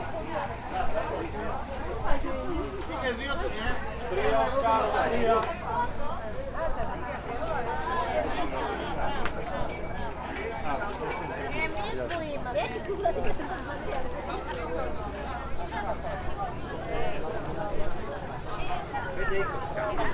Par. Klimatos, Chania, Greece - local marketplace
binaural recording - local marketplace, opens every day on a different street. vendors are pretty vocal about their merchandise. (sony d50 + luhd binaurlas)